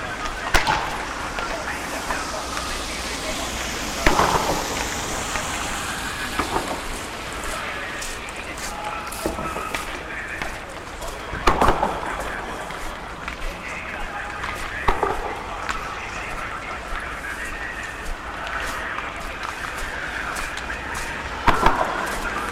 Wintertime in Helsinki, by the end of the nighty day. Some public spaces are transformed into ice hockey stadium. Walking along the street, one can hear the sound of pucks shocking on the wood borders, the comments on the sport radio & the screams of the players reverberated on the buildings around. Snowy environment can also be heard in the footsteps & specific sound of cars & trams rolling by.